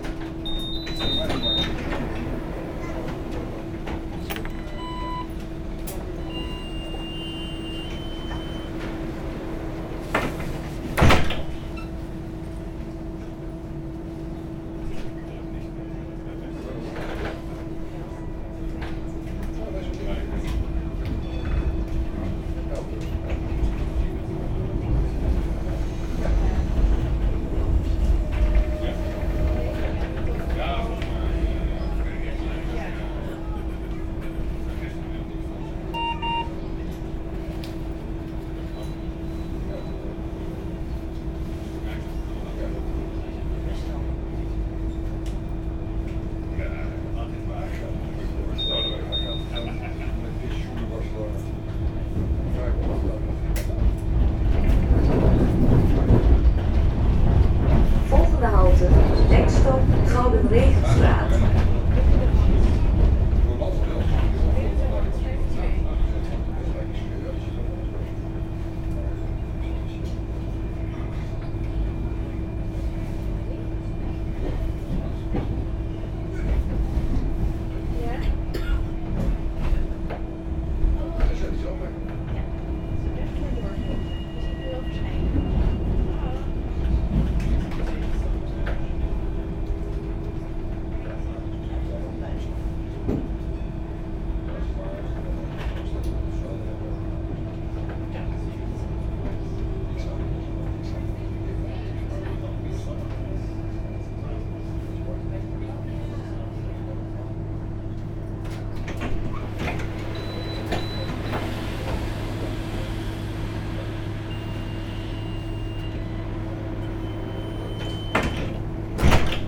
Den Haag, Nederlands - Den Haag tramway
Ride into the Den Haag tramway, from Loosduinen, Laan van Meerdervoort, Heliotrooplaan stop on the Line 3, to Elandstraat, Den Haag centrum.